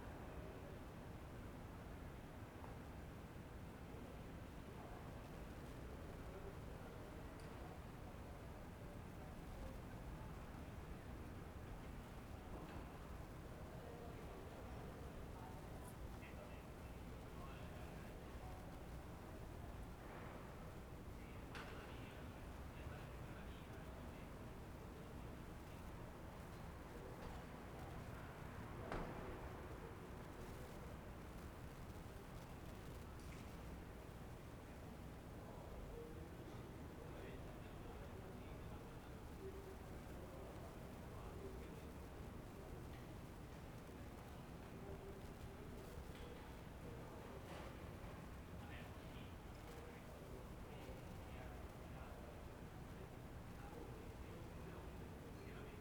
Ascolto il tuo cuore, città. I listen to your heart, city. Several chapters **SCROLL DOWN FOR ALL RECORDINGS** - Three ambiances in the time of COVID19 Soundscape
"Three ambiances in the time of COVID19" Soundscape
Chapter XXVIII of Ascolto il tuo cuore, città. I listen to your heart, city
Monday March 30 2020. Fixed position on an internal terrace at San Salvario district Turin, twenty days after emergency disposition due to the epidemic of COVID19.
Three recording realized at 2:00 p.m., 5:00 p.m. and 8:00 p.m. each one of 4’33”, in the frame of the project Ambiance Confinement, CRESSON-Grenoble research activity.
The three audio samplings are assembled here in a single audio file in chronological sequence, separated by 5'' of silence. Total duration: 13’50”